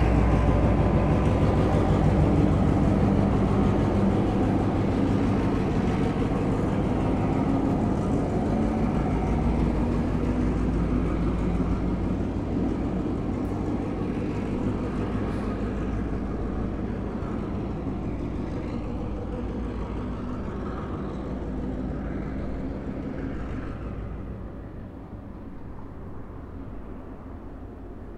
Železniška postaja, Nova Gorica, Slovenija - Prihod vlaka
Train arriving but non-abiding.
Recorded with Zoom H5 + AKG C568 B